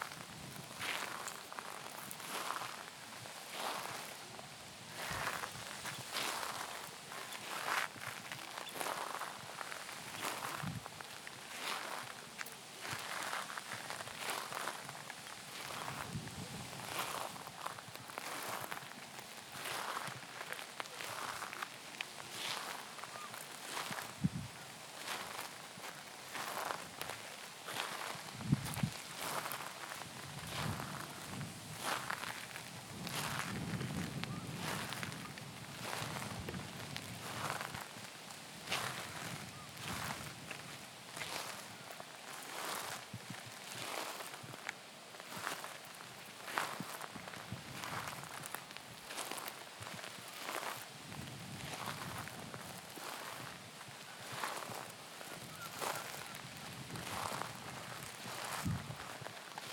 Unnamed Road, Prestatyn, UK - Gronant Beach Walking Meditation
Kinh Hanh (walking meditation) eastwards, towards the lighthouse, along the sand and gravel beach path. Recorded on a Tascam DR-40 using the on-board microphones as a coincident pair with windshield. Low-cut at 100Hz to reduce wind and handling noise.
8 August 2017, ~10:00